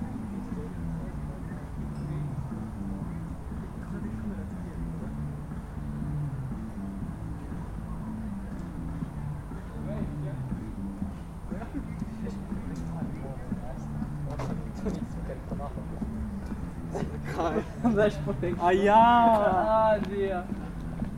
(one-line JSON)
{"title": "Mestni park, Slovenia - corners for one minute", "date": "2012-08-24 21:05:00", "description": "one minute for this corner: Mestni park", "latitude": "46.57", "longitude": "15.65", "altitude": "287", "timezone": "Europe/Ljubljana"}